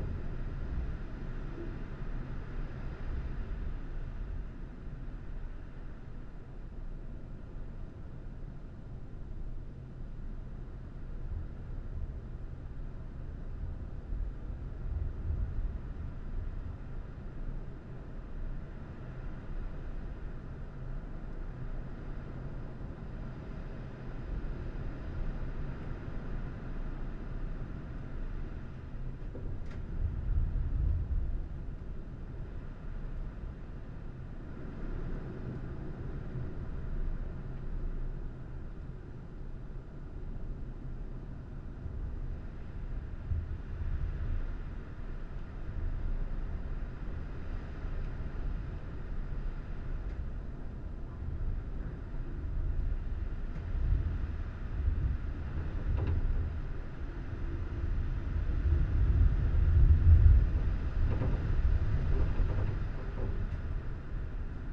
Trégastel, France - Heavy wind from inside a house
Vent violent entendu depuis derrière la fenêtre.
Heavy wind from inside a house, recorded at the windows.
/Oktava mk012 ORTF & SD mixpre & Zoom h4n